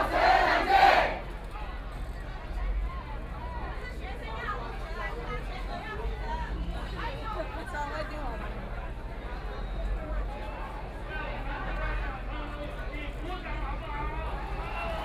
Zhongxiao E. Rd., Taipei City - Confrontation
Walking around the protest area, Confrontation, Government condone gang of illegal assembly, Who participated in the student movement to counter the cries way